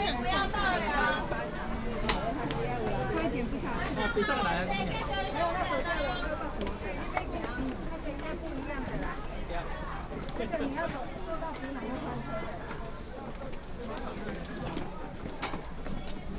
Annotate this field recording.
walking into the Station, Crowded crowd